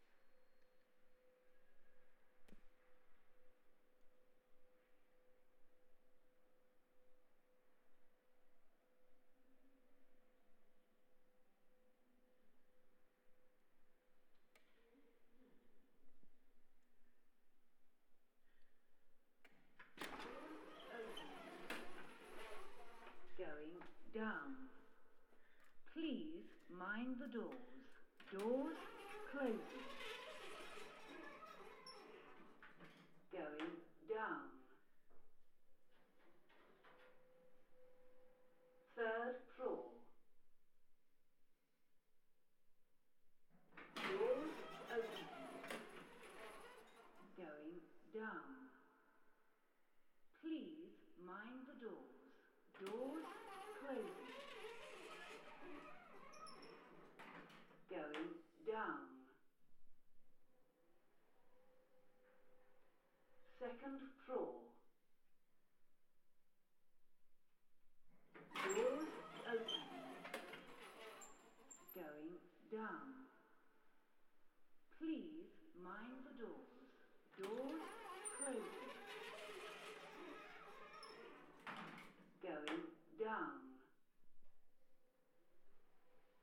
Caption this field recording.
A recording made while learning about using a stereo shotgun microphone. I climbed up 4 flights of stairs in the Glass tank and took the lift back down. liked the echo in the stairwell and the sense of volume of the spaces.